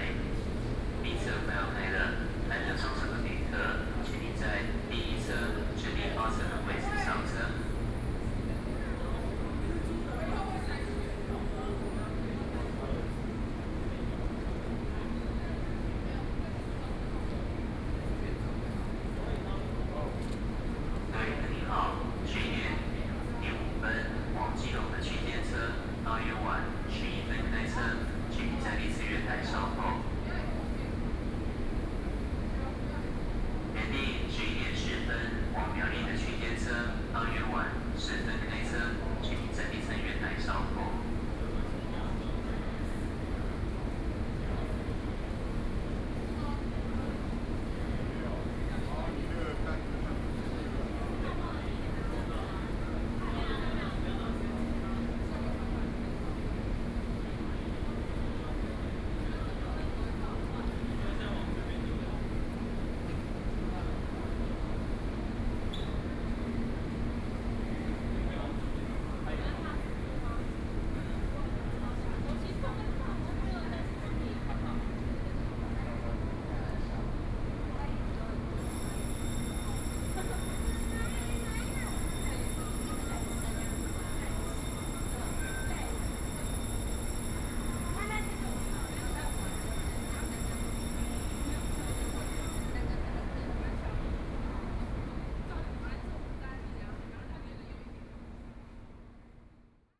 Taipei Main Station - Platform
On the platform waiting for the train, Sony PCM D50 + Soundman OKM II